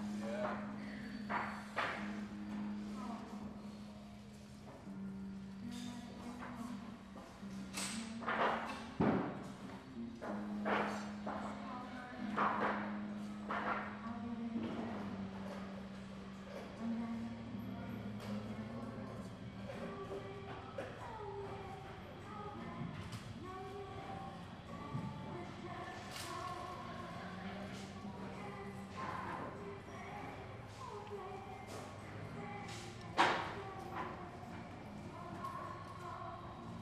The Home Depot Emeryville
The Home Depot, Emeryville